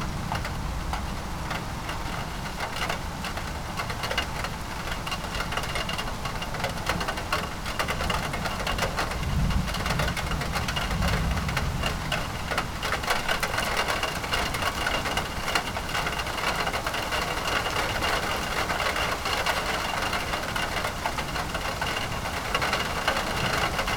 Early Morning Storm, Houston, Texas - Early Morning Storm
A bit of thunder and raindrops falling on a metal awning over our front porch.
Sony PCM D50